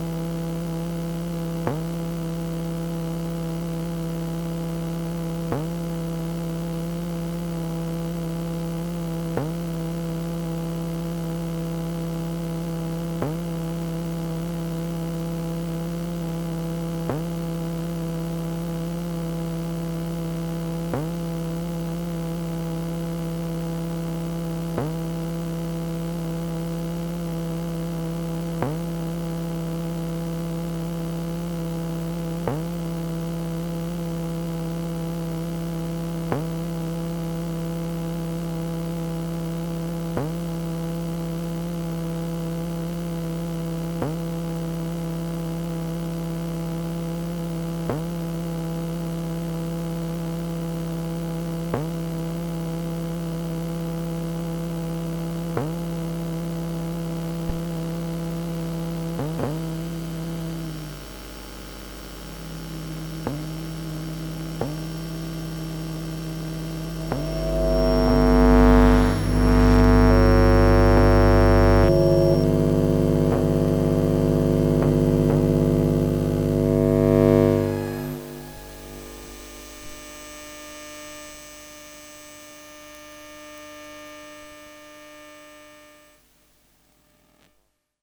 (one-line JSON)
{"title": "Ottignies-Louvain-la-Neuve, Belgium - Curious lift lament", "date": "2018-02-23 20:36:00", "description": "This is the magnetic field song of a lift. I don't really understand why this lift is crying this strange complaint waiting to leave its place. After 1:30mn, the lift is used by an old woman, who was looking at me very frightened.", "latitude": "50.67", "longitude": "4.62", "altitude": "117", "timezone": "Europe/Brussels"}